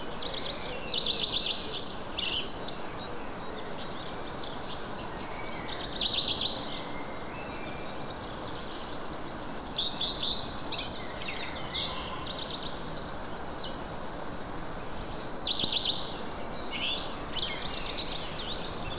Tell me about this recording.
Birds & bells from a Catholic church. Above all you can hear an airplane.